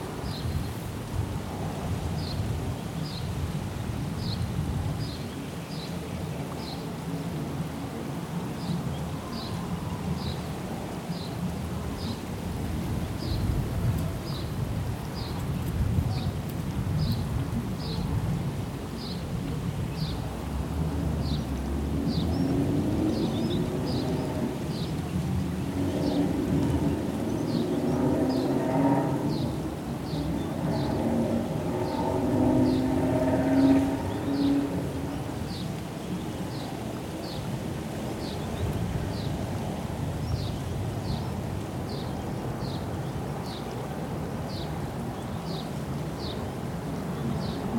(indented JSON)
{
  "title": "Hatta - United Arab Emirates - Wedding celebrations carried on the wind",
  "date": "2016-07-08 13:28:00",
  "description": "Recording in a palm grove, faint strains of drumming for a nearby wedding can just be heard.\nRecorded using a Zoom H4N",
  "latitude": "24.81",
  "longitude": "56.13",
  "altitude": "307",
  "timezone": "Asia/Dubai"
}